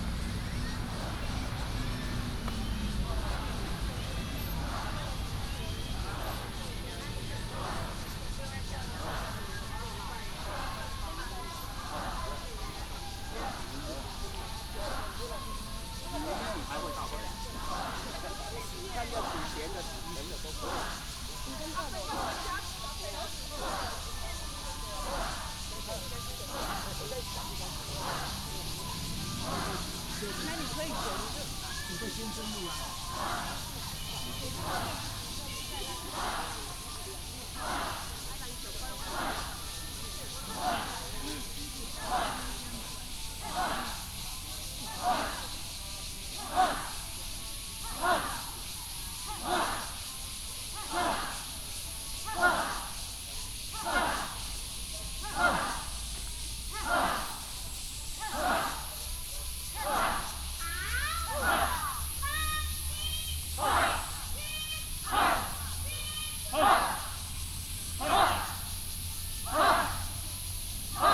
龍岡森林公園, Zhongli Dist., Taoyuan City - healthy gymnastics
in the Park, Many elderly people doing health exercises, Cicada cry, Birds sound, traffic sound
Taoyuan City, Taiwan, 26 July 2017